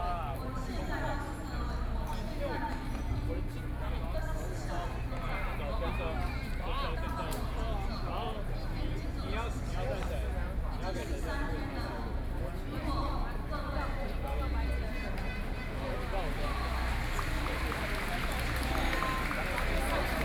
Xinyi Road - Cries of protest
Protest against the government, A noncommissioned officer's death, More than 200,000 people live events, Sony PCM D50 + Soundman OKM II